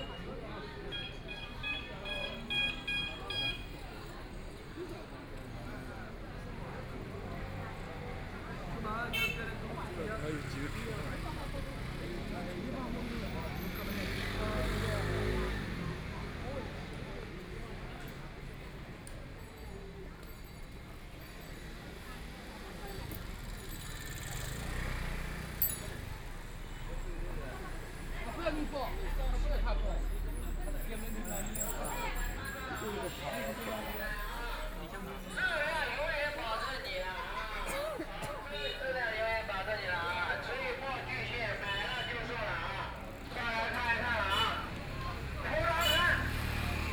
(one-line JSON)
{"title": "West fangbang Road, Shanghai - walking in the Street", "date": "2013-12-03 13:43:00", "description": "Fair, The crowd gathered on the street, Voice chat, Traffic Sound, Binaural recording, Zoom H6+ Soundman OKM II", "latitude": "31.22", "longitude": "121.48", "altitude": "10", "timezone": "Asia/Shanghai"}